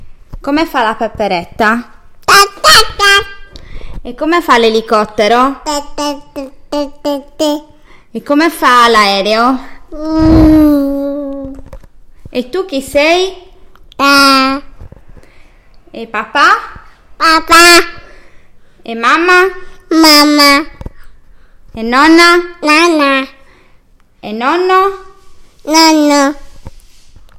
Paolo VI TA, Italia - Le mie prime parole
elicottero... aereo.... mamma, papà, nonna e nonno... e Daaaaa!